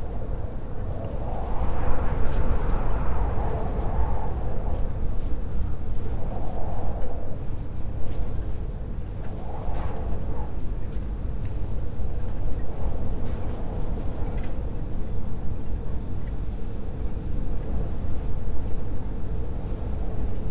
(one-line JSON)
{"title": "Teofila Mateckiego, Poznan - bedroom door gap", "date": "2020-03-15 10:17:00", "description": "wind gushing through a narrow gap of a sliding door. metal sheet balcony wall bends in the wind. wooden wind chime on the neighbor's balcony. (roland r-07)", "latitude": "52.46", "longitude": "16.90", "altitude": "97", "timezone": "Europe/Warsaw"}